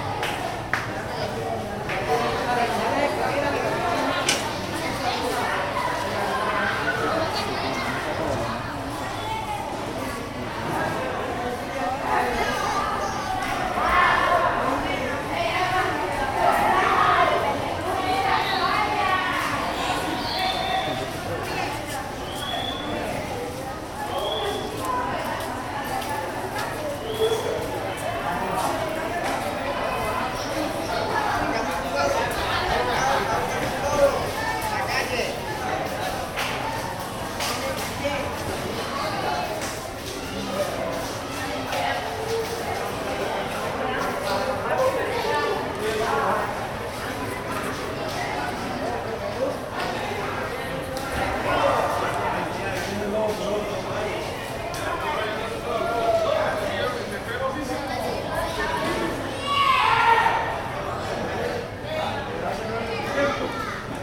Students prepare for finishing their day at the courtyard of old-time Colegio Pinillos